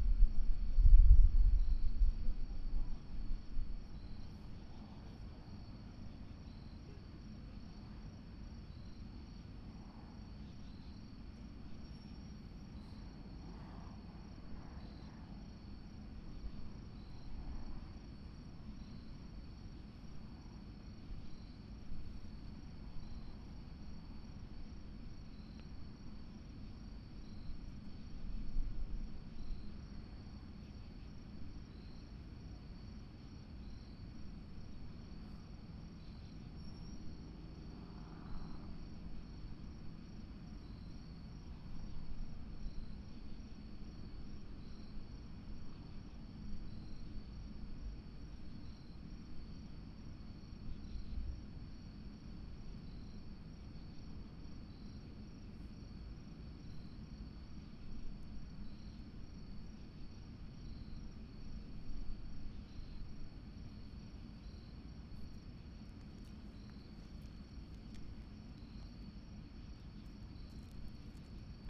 Outside ambience, a truck is running next to the student center. Crickets are chirping
Ewing Township, NJ, USA, 29 September